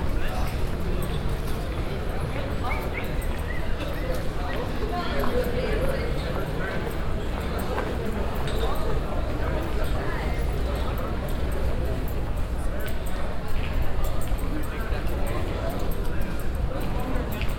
amsterdam, leidsekruisstraat, night scene
on a saturday night in the dense crowded bar and restaurant area of the town
international city scapes- social ambiences and topographic field recordings
Amsterdam, The Netherlands, 7 July, ~10:00